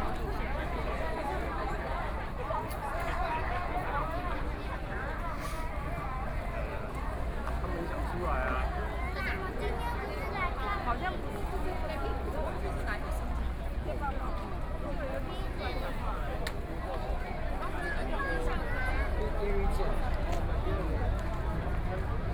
Zhongshan S. Rd., Taipei - Protest
Protest, Roads closed, Sony PCM D50 + Soundman OKM II
Zhongzheng District, Taipei City, Taiwan